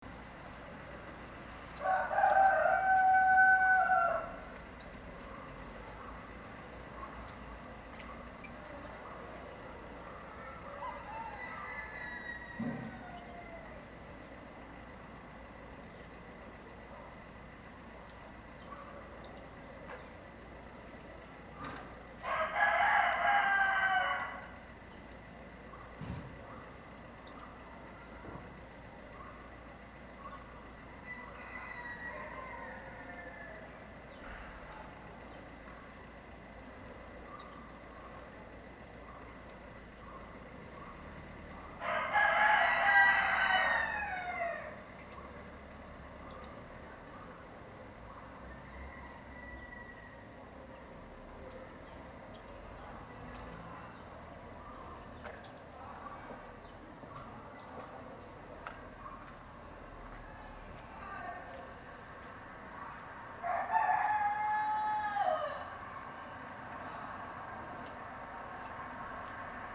Different Perspectives I, Torre D'arese, Italy - life in the village - I - an introductory perspective
This is a set of recordings taken approx. at the same time from places very close one to another (few meters or tens of meters), to capture different perspectives of the same small village.
Recording from a street, chichens in a close courtyard, a van with music passing by, some cars.